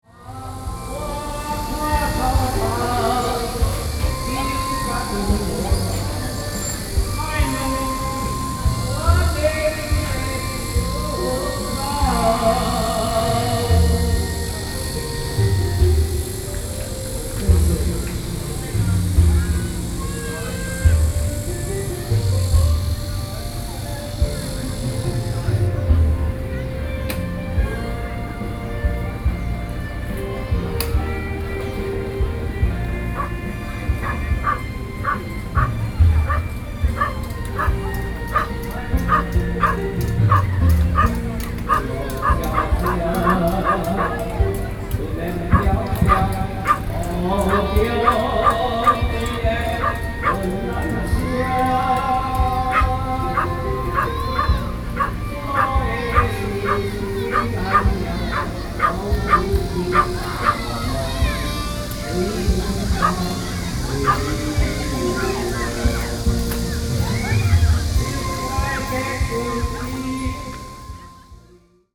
Sound of holiday and leisure tourists and residents, Dog, child, Binaural recordings
Bali District, New Taipei City, Taiwan, 1 July, ~17:00